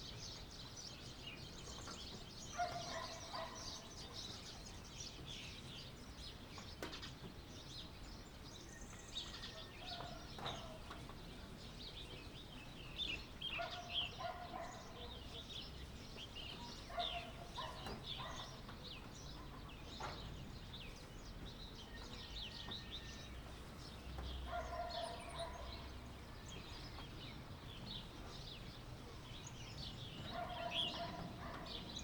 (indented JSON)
{
  "title": "Route du Bras des Étangs CILAOS - CILAOS le matin entre deux concerts dhélicoptères",
  "date": "2020-02-10 07:51:00",
  "description": "CILAOS le matin entre deux concerts d'hélicoptères (en ce moment il n'y en a pas trop, pas de touristes chinois)",
  "latitude": "-21.14",
  "longitude": "55.47",
  "altitude": "1190",
  "timezone": "Indian/Reunion"
}